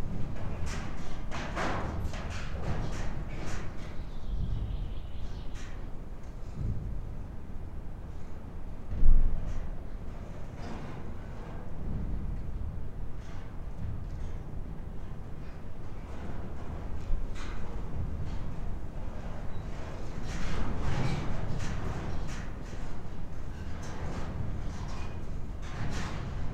Ilciukai, Lithuania, in abandoned mill
old abandoned watermill. wind plays with the collapsing roof construction